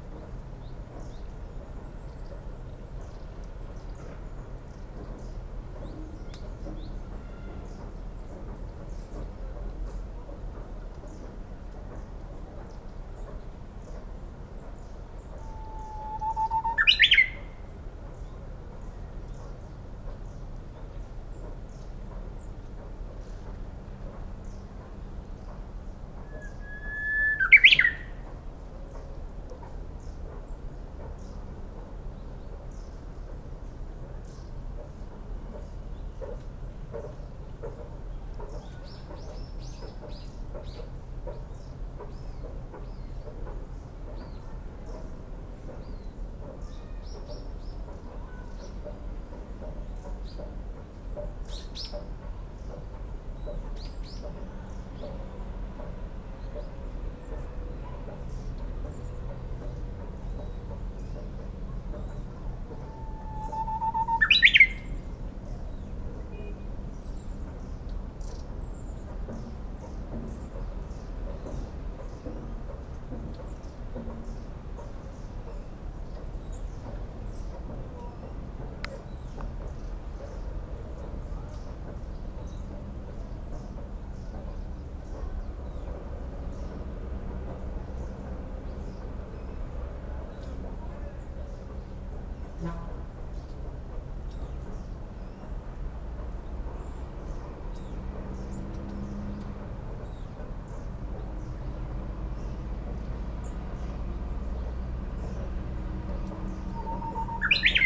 Houhokekyo songbirds on Munyeo Island (Saemangeum Area) - Houhokekyo
Saemangeum Area was formally a large wetland that supported many types of migratory and other birds. Roading has reached out over the sea and connected these small islands to the Korean mainland. The area is being heavily industrialized, and much construction can be heard in the distance as this Houhokekyo makes communication calls.
Gunsan, Jeollabuk-do, South Korea, May 2017